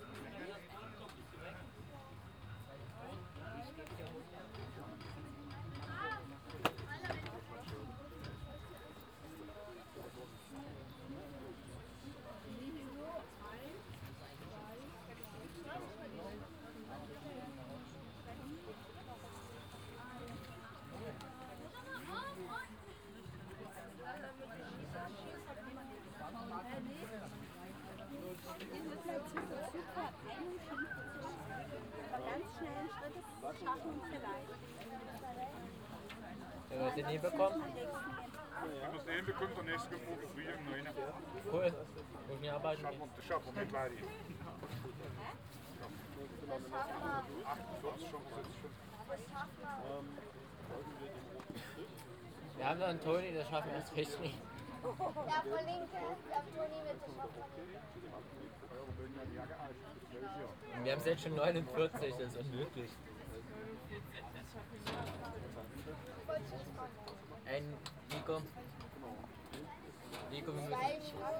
Kurort Rathen, Fährstelle Niederrathen - Reaction Ferry / Gierseilfähre, crossing river Elbe
This ferry ideally operates without a motor, so it's pretty silent and has a good ecological footprint.
(Sony PCM D50, OKM2)
Rathen, Germany, September 2018